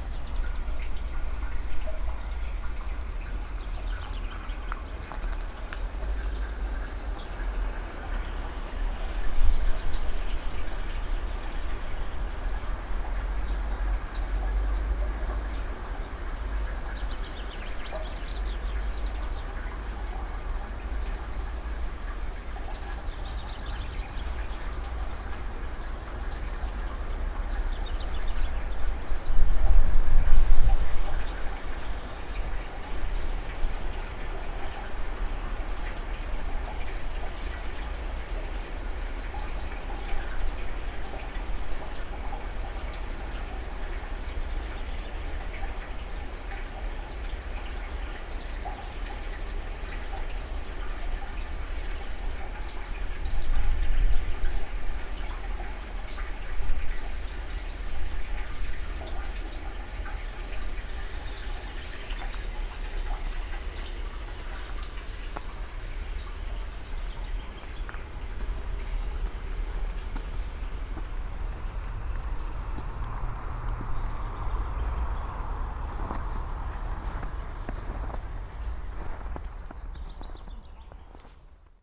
sonopoetic path, Maribor, Slovenia - walking poem
stream, spoken words, birds, steps